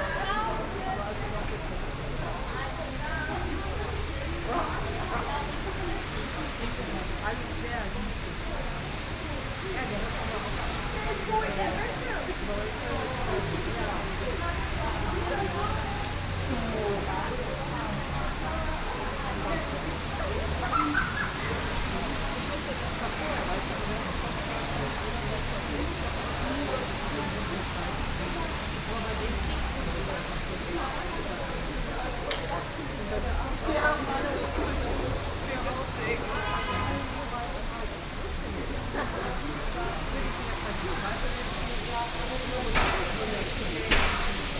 Löhrrondell, Bus Station, Koblenz, Deutschland - Löhrrondell 8
Binaural recording of the square. Eight of several recordings to describe the square acoustically. People on the phone or talking, waiting for the bus on a friday afternoon .
Koblenz, Germany, May 2017